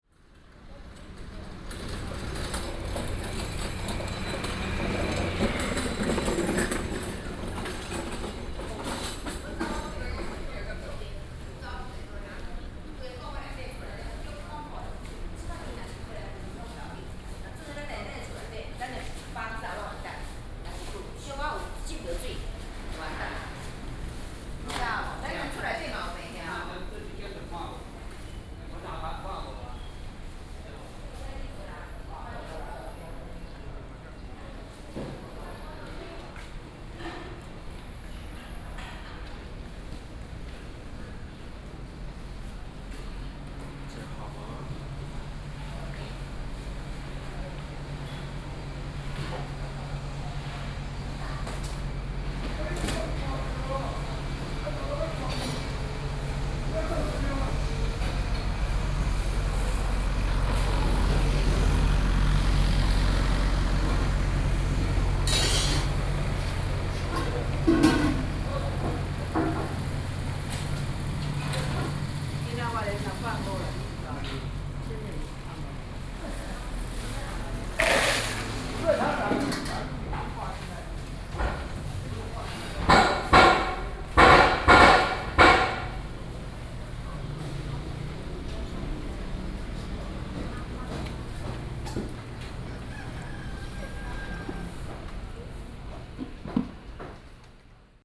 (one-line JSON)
{"title": "Gongkou St., Banqiao Dist. - Walking in a small alley", "date": "2012-06-17 07:33:00", "description": "Walking in a small alley\nBinaural recordings\nZoom H4n + Soundman OKM II", "latitude": "25.01", "longitude": "121.46", "altitude": "18", "timezone": "Asia/Taipei"}